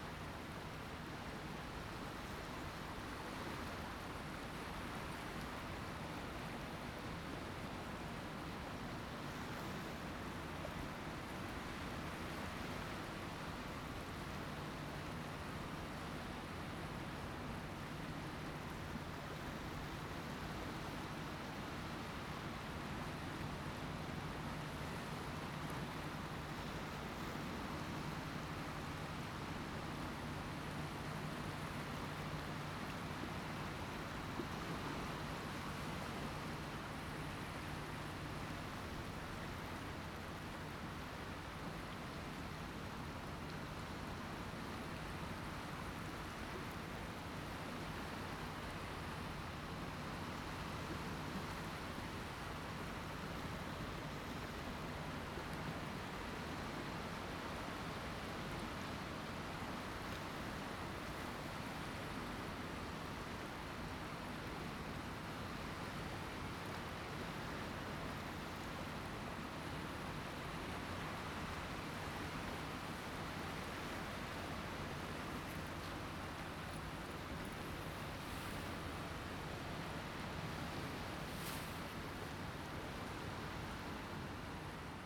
龍門沙灘, Huxi Township - At the beach
At the beach, sound of the Waves
Zoom H2n MS+XY